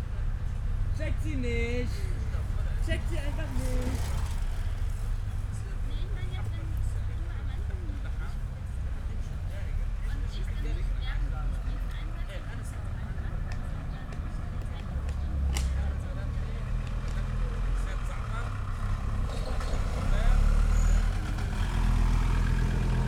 Weigandufer, Neukölln, Berlin - musicians practising
Weigandufer / Roseggerstr., Berlin Neukoelln, musicians practising on the sidewalk, summer evening ambience
(Sony PCM D50, DPA4060)
Berlin, Germany